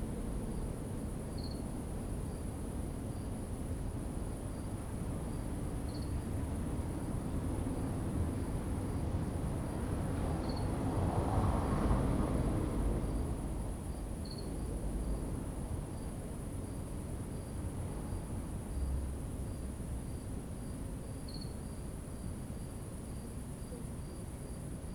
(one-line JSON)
{"title": "Olomouc, Czech Republic - Night at the Dome with cars and crickets", "date": "2012-09-05 22:59:00", "description": "Near the Dome and the street traffic, trams and car on the cobblestones", "latitude": "49.60", "longitude": "17.26", "altitude": "225", "timezone": "Europe/Prague"}